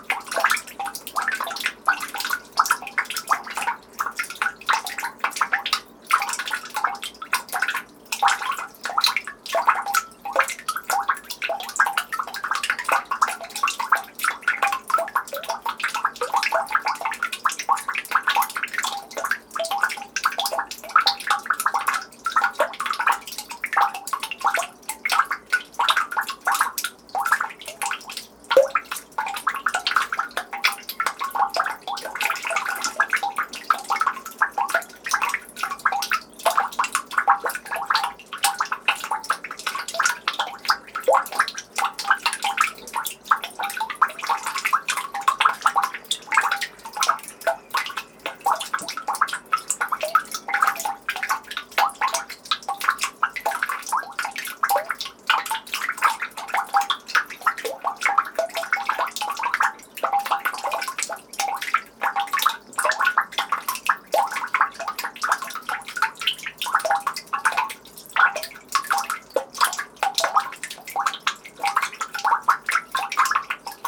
Some snow is melting in the street close to the port of Turku, drops of water are falling inside the manhole. Very close recording with the mic as close as possible.
Recorded with an ORTF setup Schoeps CCM4 x 2 on a Cinela Suspension
Recorder MixPre6 by Sound Devices
Recorded on 7th of April 2019 in Turku, Finland.
During a residency at Titanik Gallery.
GPS: 60.435320,22.237472
Turku, Finland